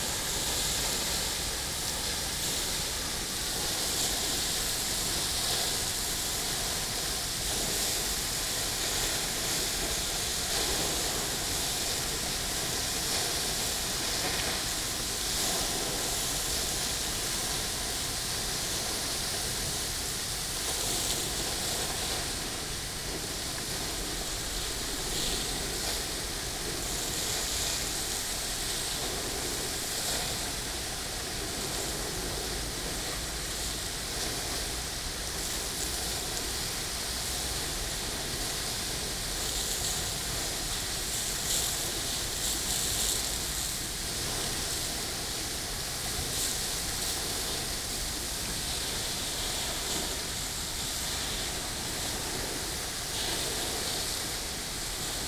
Chatsworth, UK - Emperor Fountain ...
Emperor Fountain ... Chatsworth House ... gravity fed fountain ... the column moves even under the slightest breeze so the plume falls on rocks at the base or open water ... or both ... lavalier mics clipped to sandwich box ... voices and background noises ...
2 November, 14:00